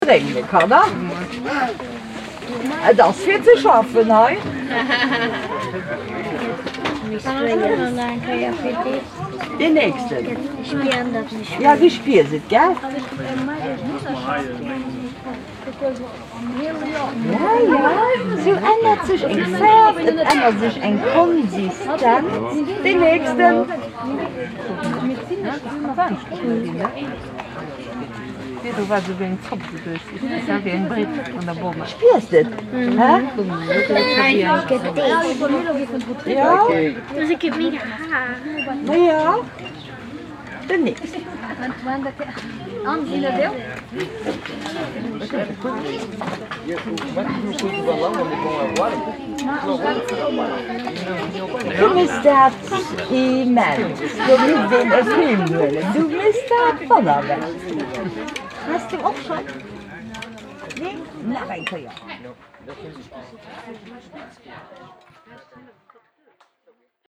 Hosingen, Luxemburg - Hosingen, nature park house, summer fair, consistance change
Auf dem Sommer-Familienfest des Naturpark Hauses an einem Zeltstand für chemische Versuche. Die Versuchsleiterin und Kinderstimmen.
At the summer family fair of the nature park house at a tent with chemical examples demonstrations. The voice of the instructor and kids.